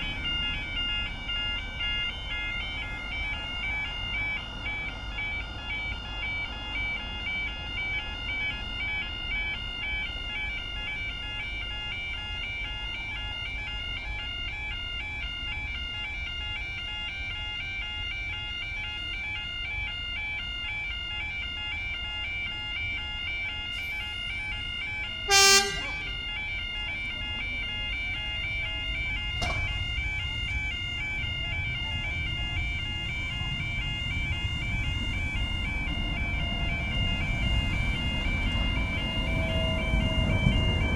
Callerton Parkway, Woolsington, UK - Callerton Parkway Level Crossing
Level Crossing at Callerton Parkway Metro Station. Sound of Level Crossing warning, cars going over crossing and Metro train going to Newcastle Airport. Also people getting off train. Recorded on Sony PCM-M10.